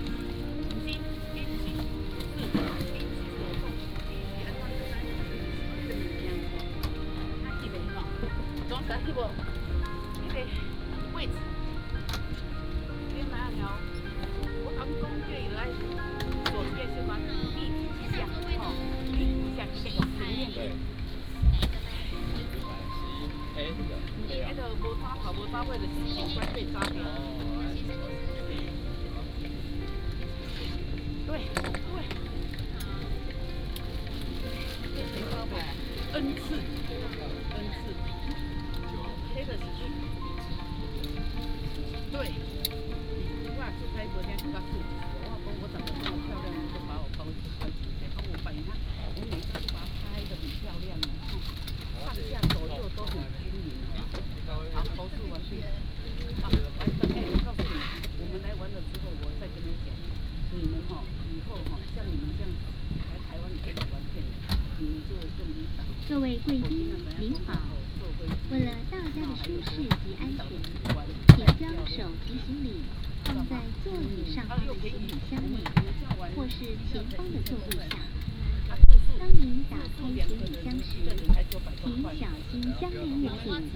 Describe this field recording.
At the airport, Go into the cabin